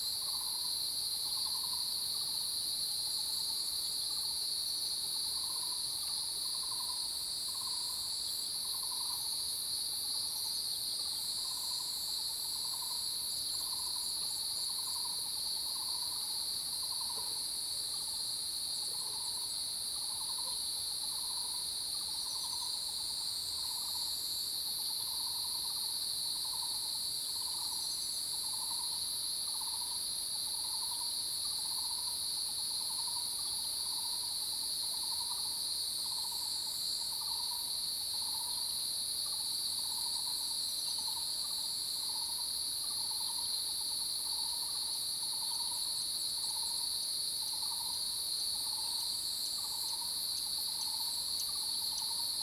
油茶園, 魚池鄉五城村, Nantou County - Cicada sounds
early morning, Birds and Cicada sounds
Yuchi Township, 華龍巷43號, 28 July